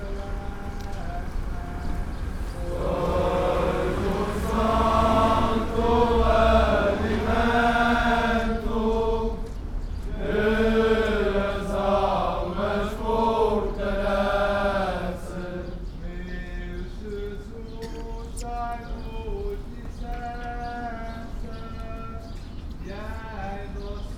Matriz - Ponta Delgada - Romeiros
The Romeiros (Pilgrims) is a traditional spiritual ritual during lent in the island of São Miguel, Azores. This group arrived at the church Matriz around 1 pm when I was drinking my coffee in a café nearby. I've immediately grabbed my pocket size Tascam DR-05 and started recording them while they were singing at the church door. Then I followed them inside the where they prayed for a few minutes before departing for a long walk to some other part of the island.
Ponta Delgada, Portugal